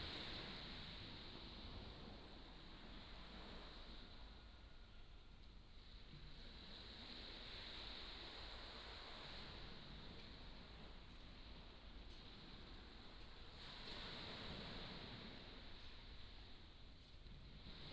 馬祖村, Nangan Township - In front of the temple
Sound of the waves, In front of the temple, Chicken sounds
福建省 (Fujian), Mainland - Taiwan Border, 15 October